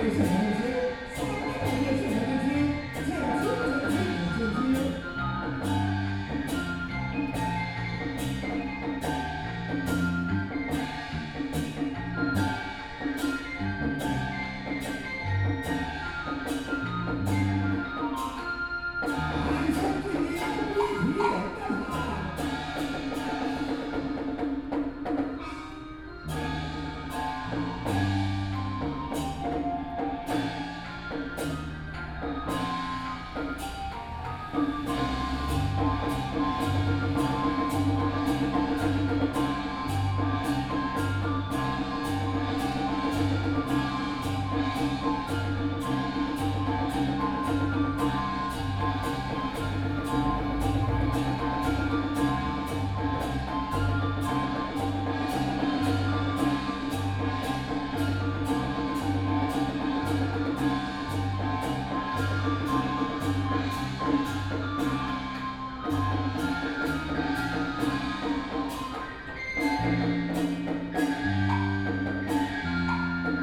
In the MRT exit, Traditional Taiwanese opera ceremony is being held, Binaural recordings, Zoom H6+ Soundman OKM II